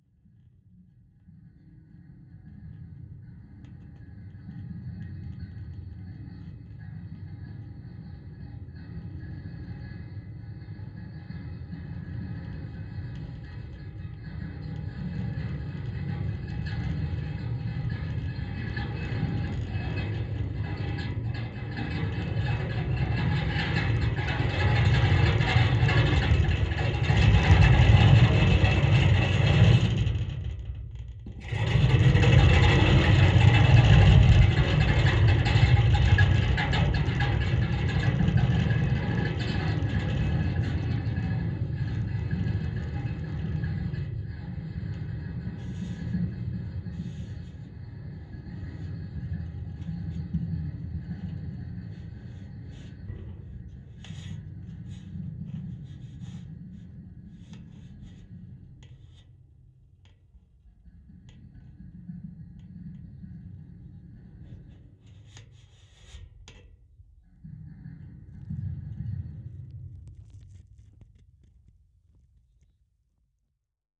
Mill Ln, Kirkby Stephen, UK - Wire Fence and a stick
Barcus Berry contact mic stuck on a wire fence. My daughter walking starting about 30m away trailing a stick along the fence towards the mic, then walking away again.